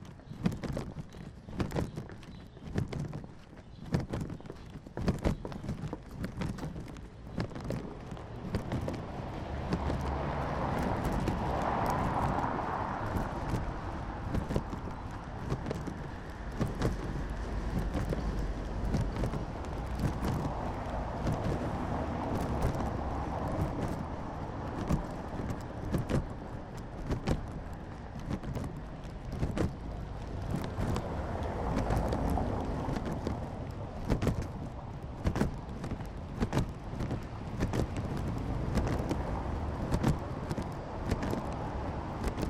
berlin: reuterstraße - walking the bags: walking bag #0016 by walking hensch
walking the bags
Berlin, Germany, 1 July 2008, 14:43